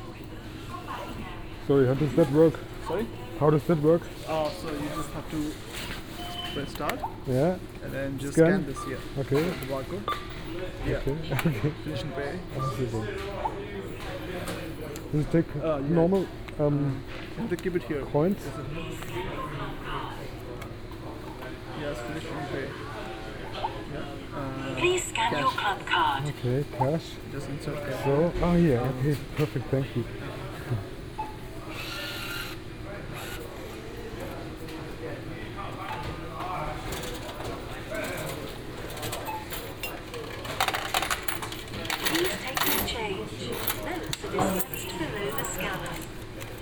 Magdalen Str., Oxford - supermarket, closing time
supermarket, near closing time, clean up, had to ask how the self service cash point works
(Sony D50, OKM2)
Oxford, Oxfordshire, UK, March 2014